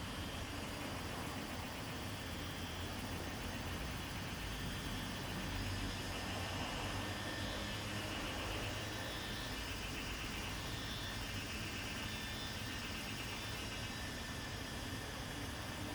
桃米溪, TaoMI Li, 埔里鎮 - Cicadas cry
Cicadas cry, In the stream, Near Parking
Zoom H2n MS+XY